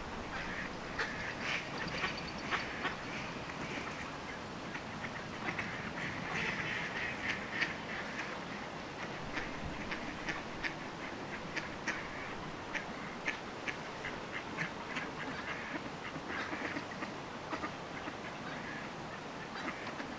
Lithuania, Vilnius, city ducks
ducks at river